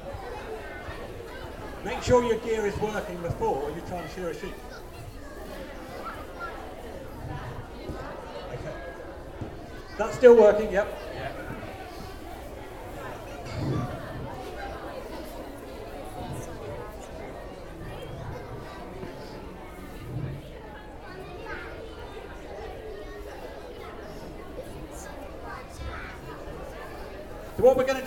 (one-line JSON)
{"title": "The Lambing Shed, Amners Farm, Burghfield, UK - Sheep shearing demonstration", "date": "2017-05-06 11:06:00", "description": "This the sound of Chris Webber demonstrating how a sheep is sheared. The sheep in question is a North Country Mule crossed with a Suffolk ram. The flock on this farm is full of wonderful sturdy little meat sheep, with incredible mothers, who produce many lambs and a lovely thick, strong woolly fleece. Chris said the Wool Marketing Board currently pay £5 per fleece which is very encouraging to hear. The demonstration was so interesting and inspiring - to me the skill involved in shearing a sheep is no ordinary task and I never tire of watching the process. Keeping the sheep docile and turning it around, all the while working over it with the buzzing clippers (that you can hear in this recording) and somehow managing to not cut the skin, look to me like a real feat. This is how all the wool in our jumpers is obtained - through this action - and it's beautiful to see it being well done.", "latitude": "51.42", "longitude": "-1.03", "altitude": "41", "timezone": "Europe/London"}